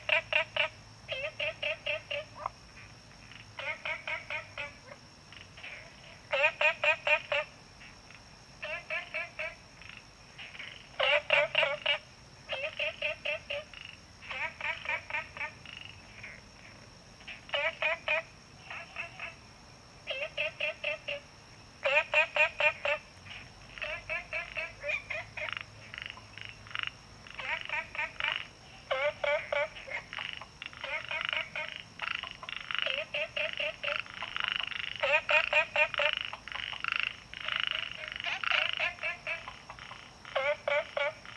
青蛙ㄚ婆ㄟ家民宿, Puli Township, Nantou County - A variety of chirping frogs
Ecological pool, A variety of chirping frogs
Zoom H2n MS+XY
Puli Township, 桃米巷11-3號, 17 May 2016, 21:44